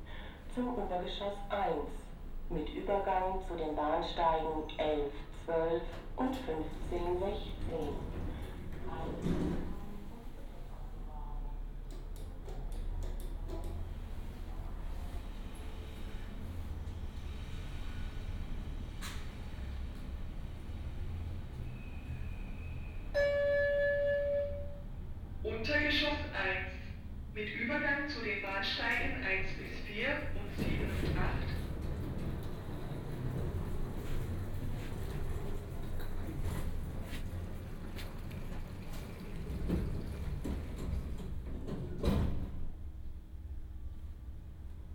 lehrter bahnhof (hbf): aufzugansage, untergeschoss, gleis - Hbf, Vertikale 2.UG - 2.OG
05.01.2009 19:30, elevator ride in berlin main station, 4 floors.